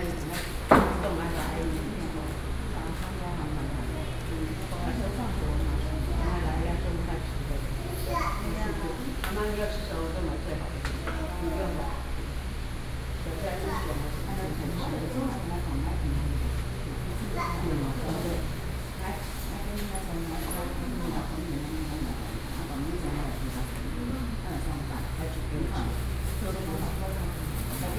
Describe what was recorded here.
in the station hall, Traffic Sound, Sony PCM D50+ Soundman OKM II